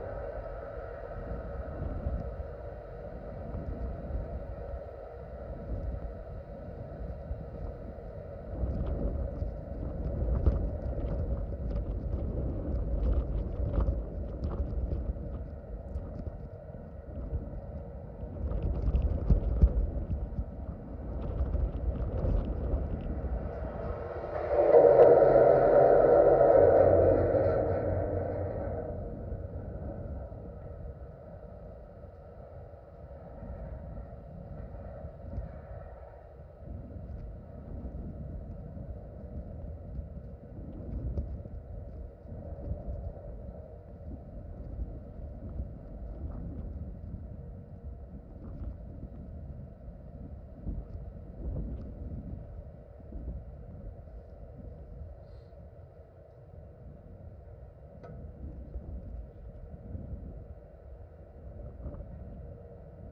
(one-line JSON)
{"title": "Gdańsk, Poland - śluza most", "date": "2015-12-05 12:05:00", "description": "contact mic recordings of the bridge, cars passing by\nśluza i most nagrana mikrofonami kontaktowymi", "latitude": "54.31", "longitude": "18.92", "timezone": "Europe/Warsaw"}